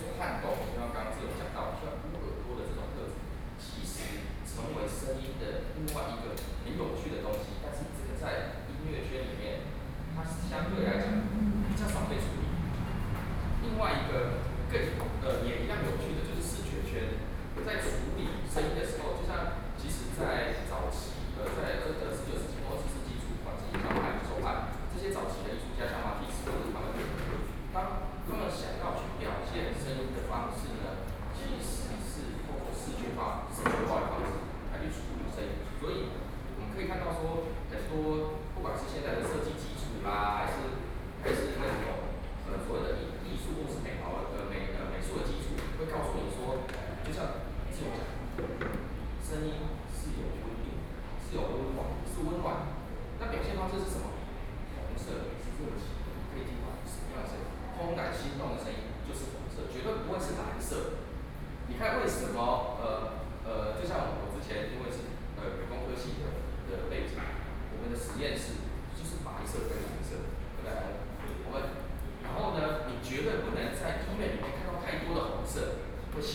Nou Gallery - Artists forum
Art critic is to express their views, Sony Pcm d50+ Soundman OKM II